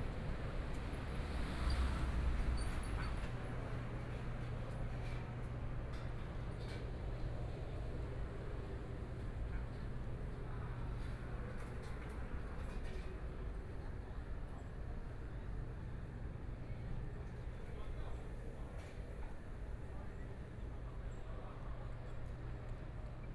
Zhongshan District, Taipei City - on the Road
walking on the road, Environmental sounds, Traffic Sound, Binaural recordings, Zoom H4n+ Soundman OKM II
6 February 2014, 13:20